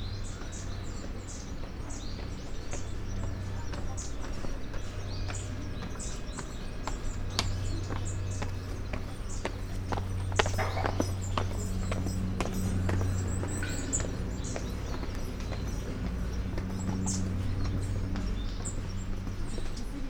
Suzumushi-dera, Kyoto - stairs, meadow behind the fence
Kyoto, Kyoto Prefecture, Japan